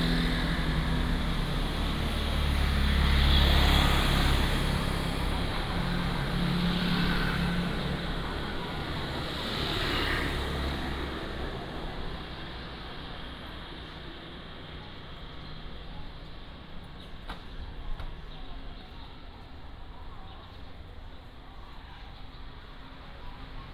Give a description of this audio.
Walking in the market, Traffic sound, Vendors, motorcycle